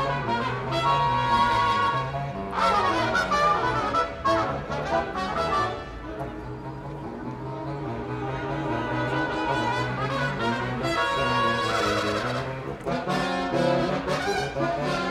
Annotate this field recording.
Ryan Quigley, Paul Towndrow, Konrad Wiszniewski, Allon Beauvoisin